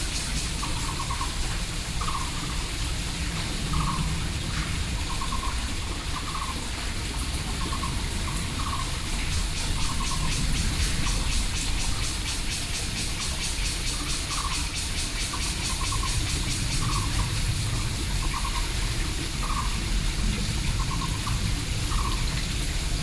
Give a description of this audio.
The frogs, Cicadas called, Aircraft, Binaural recordings